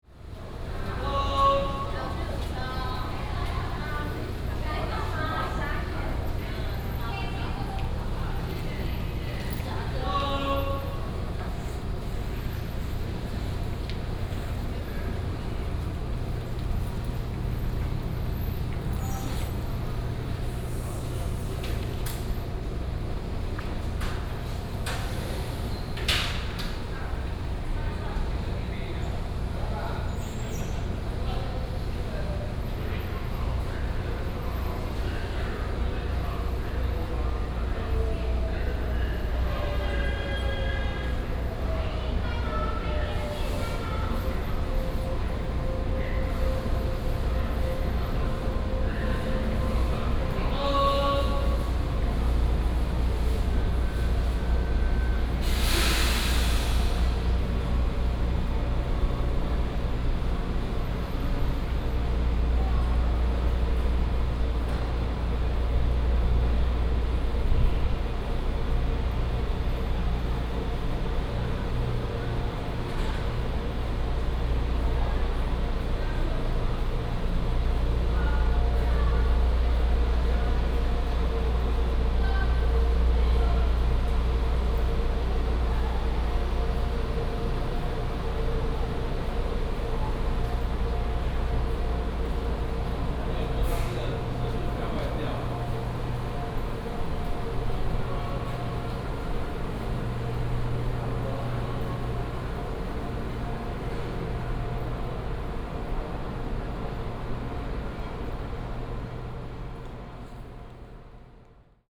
Hualien Station, Taiwan - At the train station platform
At the train station platform
Binaural recordings
Hualien City, Hualien County, Taiwan, 14 December 2016, 18:09